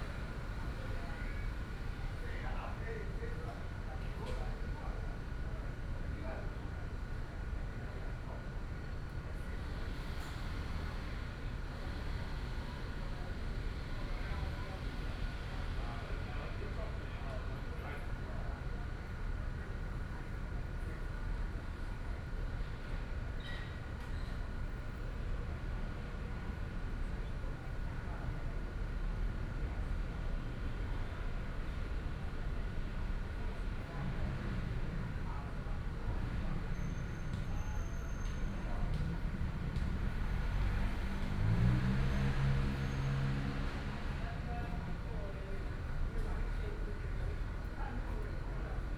XinXing Park, Taipei City - Night in the park
Night in the park, Children, Traffic Sound, sit behind the small temple
Please turn up the volume a little
Binaural recordings, Sony PCM D100 + Soundman OKM II